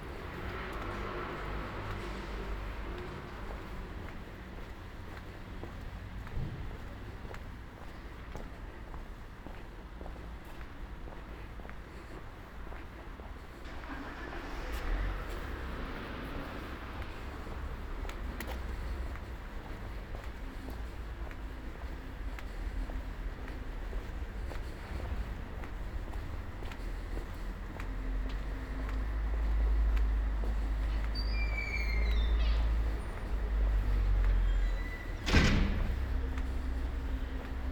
{
  "title": "Ascolto il tuo cuore, città. I listen to your heart, city. Several Chapters **SCROLL DOWN FOR ALL RECORDINGS - La flanerie aux temps du COVID19 Soundwalk",
  "date": "2020-03-10 19:31:00",
  "description": "Tuesday March 10 2020. Walking in the movida district of San Salvario, Turin the first night of closure by law at 6 p.m.of all the public places due to the epidemic of COVID19. Start at 7:31 p.m., end at h. 8:13 p.m. duration of recording 40'45''\nThe entire path is associated with a synchronized GPS track recorded in the (kml, gpx, kmz) files downloadable here:",
  "latitude": "45.06",
  "longitude": "7.68",
  "altitude": "246",
  "timezone": "Europe/Rome"
}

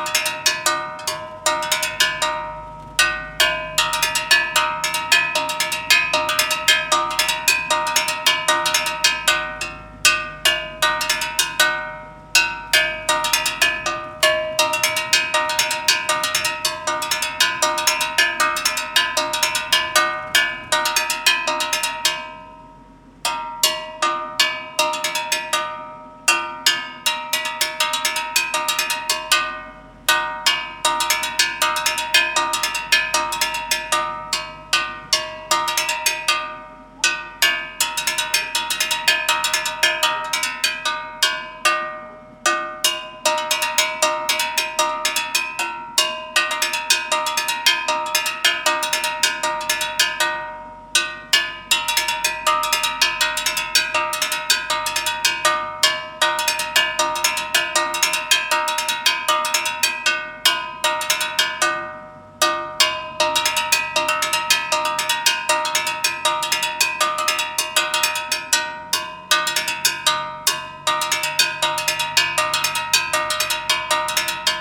{
  "title": "Plaza Echaurren - Gas Seller Percussion",
  "date": "2015-12-01 15:00:00",
  "description": "Gas Seller are doing percussion at the back of the truck to announce he is passing by.\nRecorded by a MS Schoeps CCM41+CCM8",
  "latitude": "-33.04",
  "longitude": "-71.63",
  "altitude": "23",
  "timezone": "America/Santiago"
}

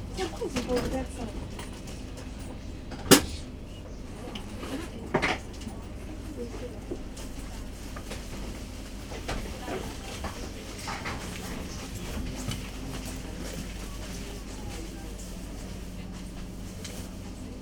{
  "title": "Les Aubrais Orléans",
  "date": "2011-07-18 12:55:00",
  "description": "world listening day",
  "latitude": "47.93",
  "longitude": "1.91",
  "altitude": "116",
  "timezone": "Europe/Paris"
}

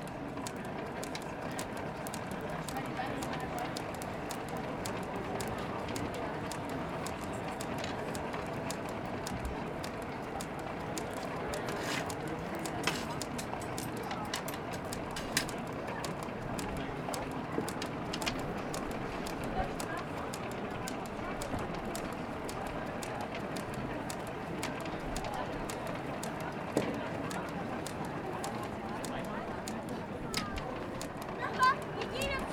{
  "title": "Siegburg, Deutschland - Schmied auf dem mittelalterlichen Weihnachtsmarkt / Blacksmith on the medieval Christmas market",
  "date": "2014-12-19 20:30:00",
  "description": "Der Schmied auf dem Markt heizt sein Schmiedefeuer mit einem fußgetriebenen Blasebalg. Dann schmiedet er das weißglühende Werkstück.\nThe blacksmith on the market heats up his forge with a foot driven bellows. Then he forges the incandescent workpiece.",
  "latitude": "50.80",
  "longitude": "7.21",
  "altitude": "70",
  "timezone": "Europe/Berlin"
}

